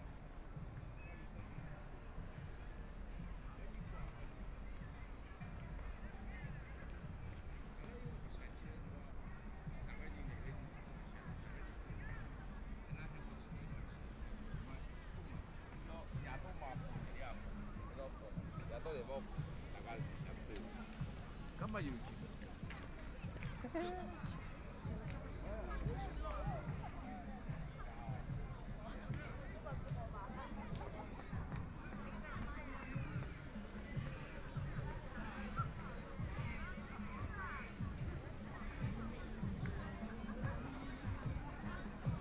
Walking through the park, Binaural recording, Zoom H6+ Soundman OKM II